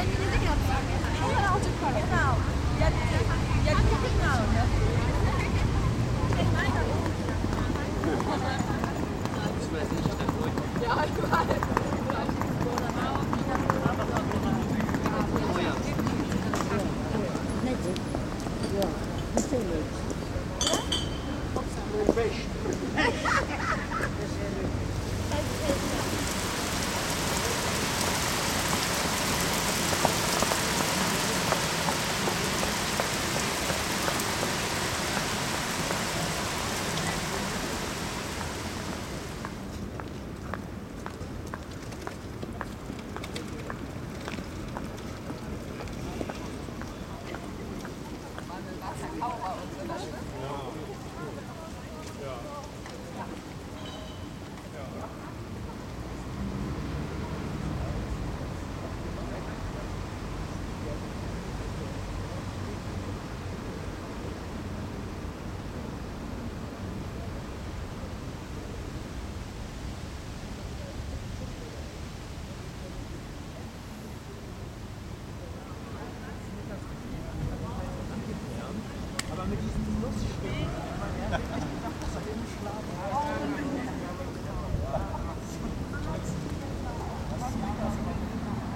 This is a 24h soundscape in front of the shopping mall Mercado in Ottensen that has changed the face of this quartier profoundly